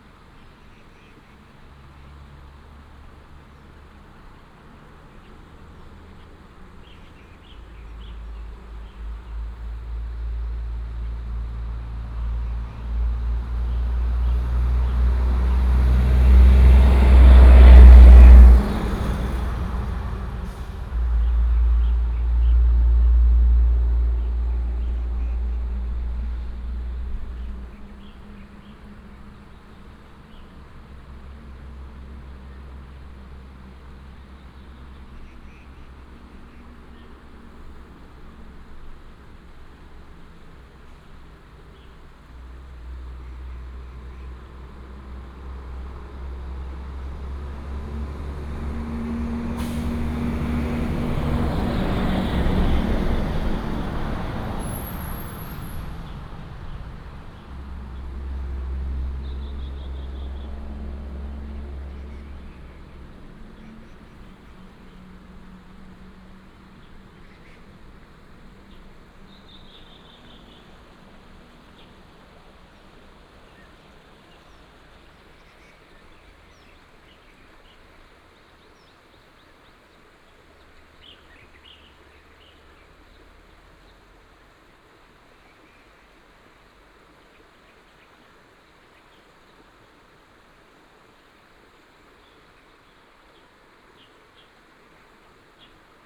楓港溪, 南迴公路, Shizi Township - Morning next to the highway
Beside the road, stream, in the morning, Traffic sound, Bird call, Morning next to the highway
Binaural recordings, Sony PCM D100+ Soundman OKM II